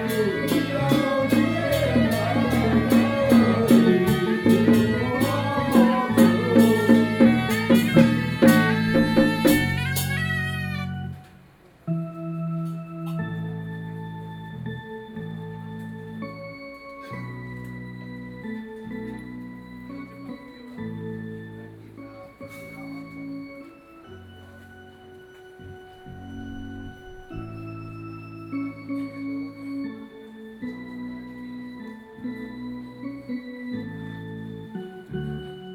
Qingshui District, Taichung - funeral ceremony
Traditional funeral ceremony in Taiwan, Zoom H4n + Soundman OKM II